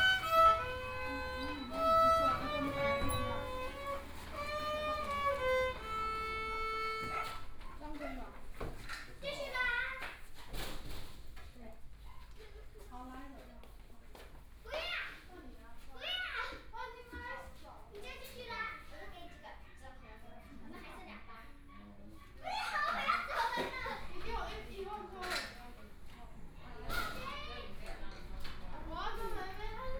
Guangming Rd., Fangyuan Township - in the Small village
in the Small village, Children are practicing the violin, Traffic Sound, Zoom H4n+ Soundman OKM II, Best with Headphone( SoundMap20140104- 2b )
Fangyuan Township, Changhua County, Taiwan, 4 January 2014